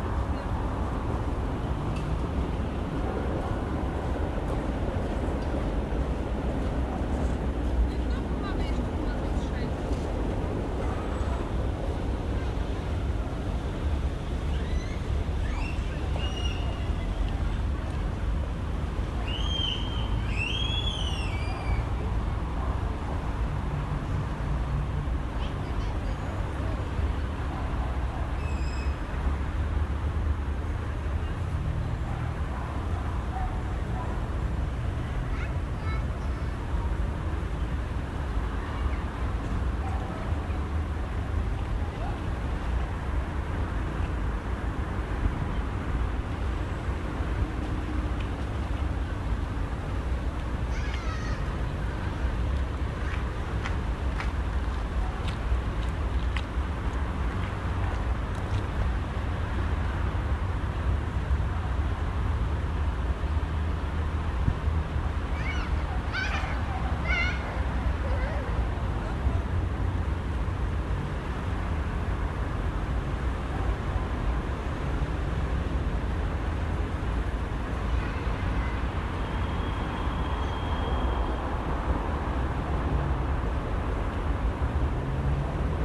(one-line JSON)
{"title": "Pulawskiego, Szczecin, Poland", "date": "2010-10-07 11:24:00", "description": "Ambiance in front of the library.", "latitude": "53.43", "longitude": "14.54", "altitude": "24", "timezone": "Europe/Berlin"}